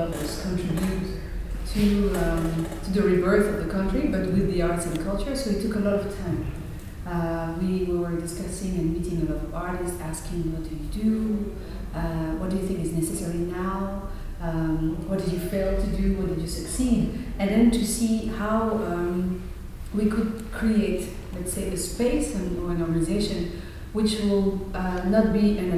After-performance talk by the team of Ishyo Art Centre Kigali. Carole Karemera and her team of actors had come to Hamm for a week as guests of the Helios Children Theatre and the “hellwach” (bright-awake) 6th International Theatre Festival for young audiences.
With Carole Karemera, Michael Sengazi and Solange Umhire (Ishyo Art Centre), moderated by Birte Werner of the “Bundesakademie fur Kulturelle Bildung” (academy of cultural education); introduced by Michael Lurse (Helios Theater).
The entire talk is archived here: